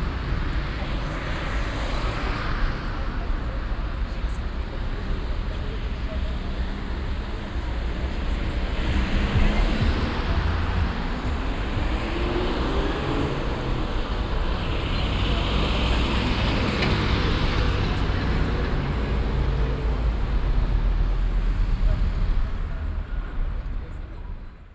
cologne, barbarossaplatz, verkehrsabfluss luxemburgerstrasse - koeln, barbarossaplatz, verkehrsabfluss luxemburgerstrasse 02
strassen- und bahnverkehr am stärksten befahrenen platz von köln - aufnahme: nachmittags
soundmap nrw: